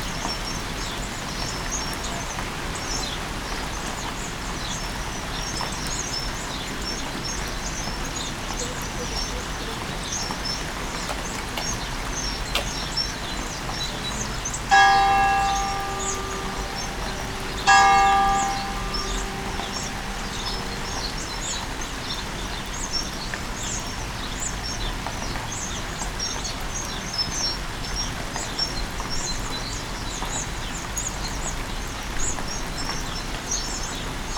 Opatje selo, Miren, Slovenija - Rainy day in Opatje selo
Rainy day, bird's singing, the church bell strikes twice for the announcement of half an hour.
Recorded with ZOOM H5 and LOM Uši Pro, AB Stereo Mic Technique, 40cm apart.